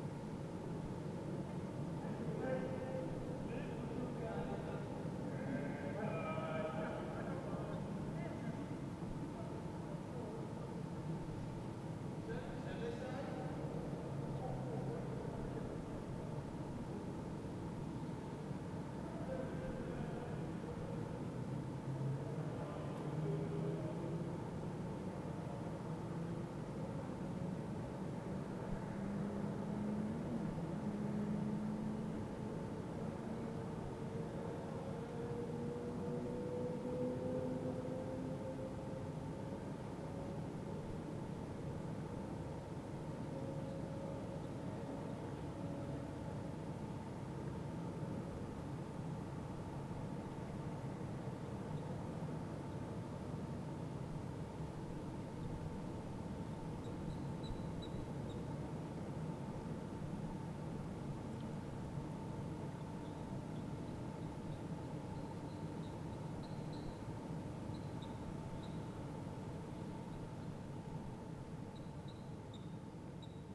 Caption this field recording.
The ambiance of deep night, silent city noise, some (drunk?) french men approaching under the building passage and proceeding further in the distance. Nice morphing of ambiance from the distance, under the passage, echoes in the square. Recorded with Zoom H4